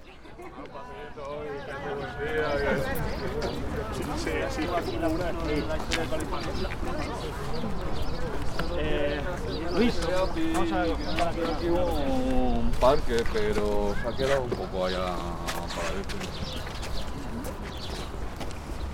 Adelfas, Madrid, Madrid, Spain - Pacífico Puente Abierto - Transecto - 02 - Calle Seco
Pacífico Puente Abierto - Transecto - Calle Seco
April 2016